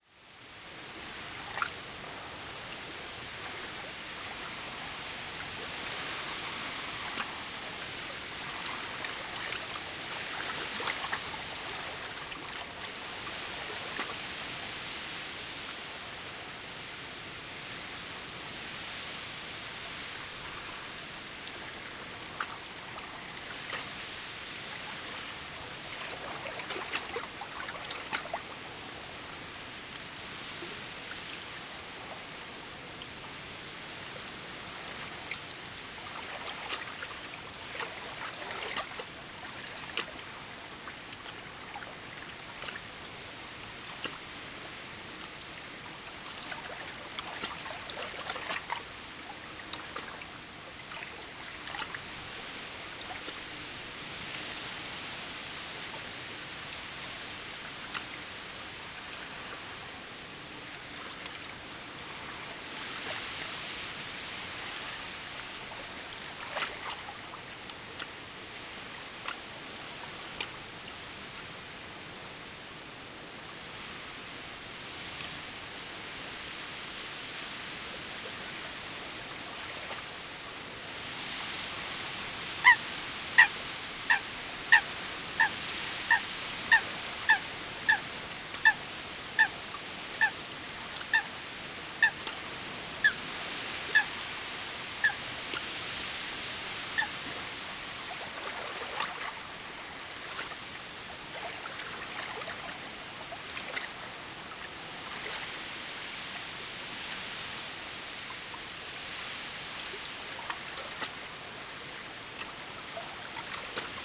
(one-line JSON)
{"title": "workum, het zool: canal bank - the city, the country & me: canal bank, stormy weather", "date": "2015-06-13 18:08:00", "description": "stormy late afternoon, small pier, wind blows through reed, coot calls\nthe city, the country & me: june 13, 2015", "latitude": "52.96", "longitude": "5.42", "altitude": "1", "timezone": "Europe/Amsterdam"}